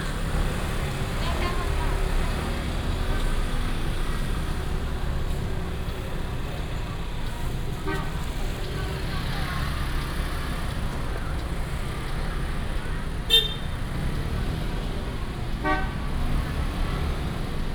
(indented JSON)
{
  "title": "Datong St., Shalu Dist. - Walking in the traditional market",
  "date": "2017-02-27 09:34:00",
  "description": "Walking in the traditional market, Traffic sound",
  "latitude": "24.24",
  "longitude": "120.56",
  "altitude": "14",
  "timezone": "Asia/Taipei"
}